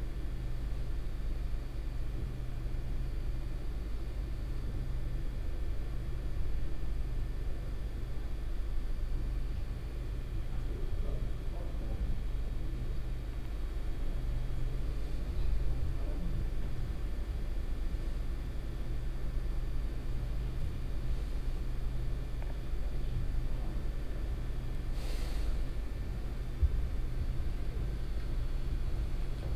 luxembourg, abbey neumünster, empty theatre
Inside a small theatre before the performance. The sound of the electric light dimmer and some conversation of the technician.
international city scapes - topographic field recordings and social ambiences
16 November 2011, 16:07